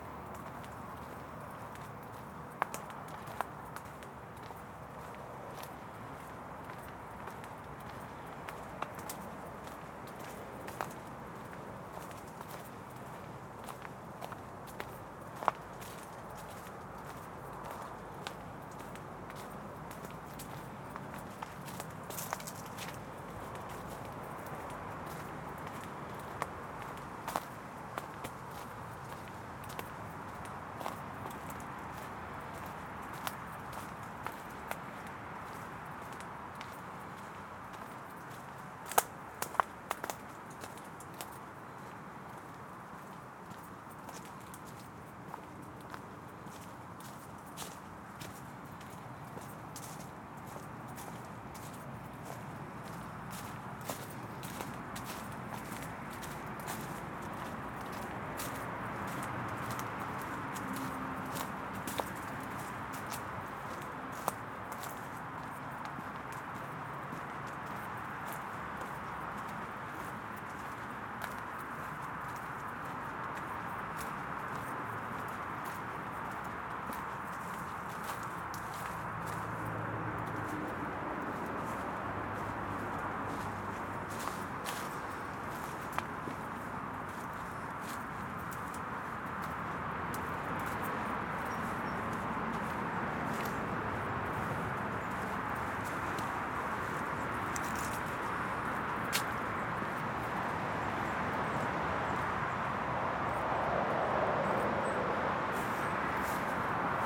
footbridge, Hoo Peninsula, Kent, UK - Leaving Strood via Higham
Walking across planted fields and over the bridge, on to a bridleway parallel to the road on the other side. Note how an earth bank provides significant attenuation to traffic noise as the bridleway inclines downwards relative to the road.